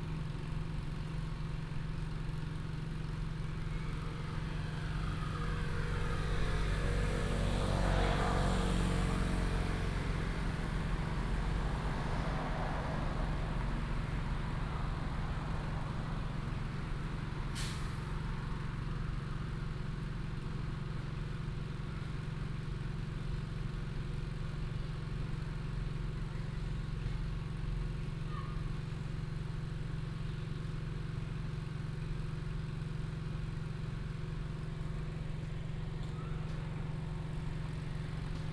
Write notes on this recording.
Village entrance, Nearby train tracks, After the train passes, Pumps, School children's voice, Bird cry